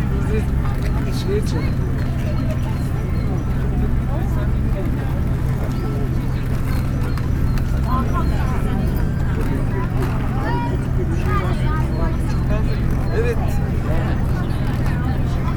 Food Market, Victoria Park, London, UK - Market
The crowd at an ethnic food market on a sunny Sunday.
MixPre 6 II with 2 x Sennheiser MKH 8020s in a rucksack.